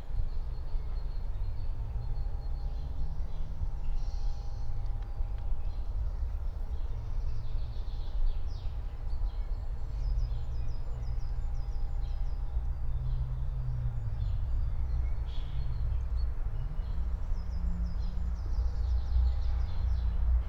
Friedhof Columbiadamm, Berlin - cemetery ambience
12:24 Friedhof Columbiadamm, Berlin
(remote microphone: AOM 5024HDR/ IQAudio/ RasPi Zero/ 4G modem)